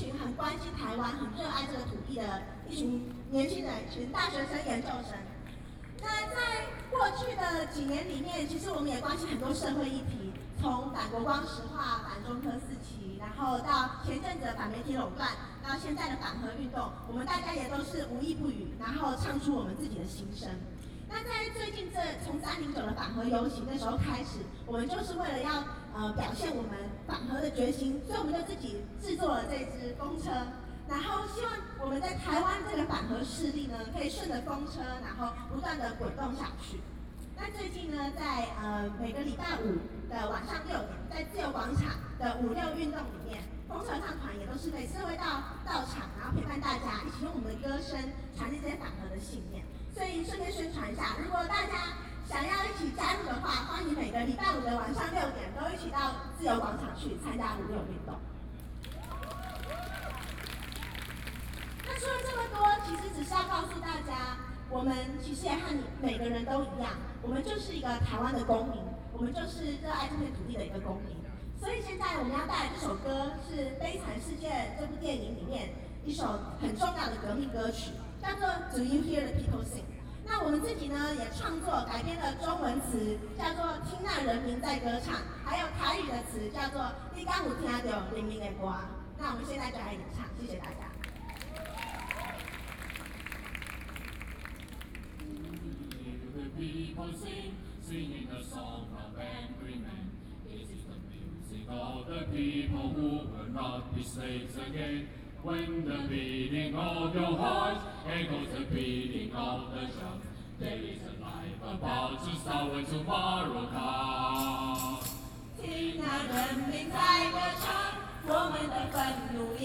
A group of college students singing, Anti-Nuclear Power, Zoom H4n+ Soundman OKM II